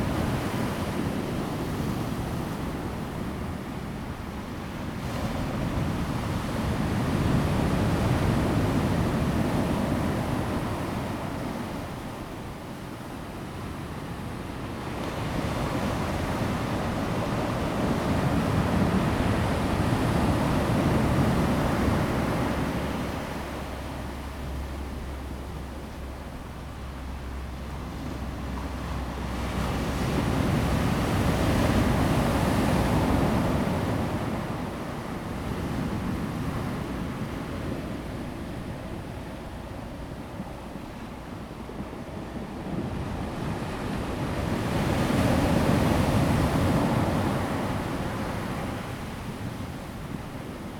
南迴公路 南興, Dawu Township - Sound of the waves
at the seaside, Sound of the waves
Zoom H2n MS+XY
Taitung County, Taiwan, 23 March